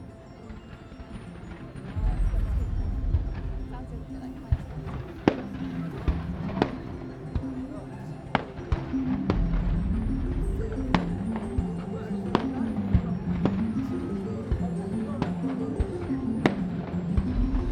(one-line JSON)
{"title": "Square Gilbert Savon, Cassis, France - fireworks & car alarm", "date": "2013-05-19 23:15:00", "description": "The time is a guess.\nIn Cassis there was a fireworks show featuring music, men wearing suits covered in LEDs blowing fire on boats, and also abseiling up the side of the nearby cliff and zip-lining down to the sea. There were also projections onto the side of the cliff face.\nIn the recording you can hear the fireworks and music most prominently, and towards the end you can hear a car alarm that was triggered by the fireworks, and some voices from the crowd.\nRecorded on a ZOOM H1", "latitude": "43.21", "longitude": "5.54", "altitude": "6", "timezone": "Europe/Paris"}